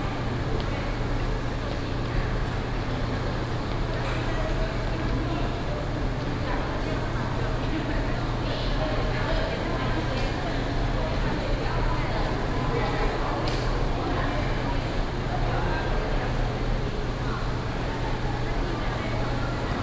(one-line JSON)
{"title": "Taichung Station, Taiwan - In the station hall", "date": "2016-09-06 16:09:00", "description": "In the station hall", "latitude": "24.14", "longitude": "120.69", "altitude": "81", "timezone": "Asia/Taipei"}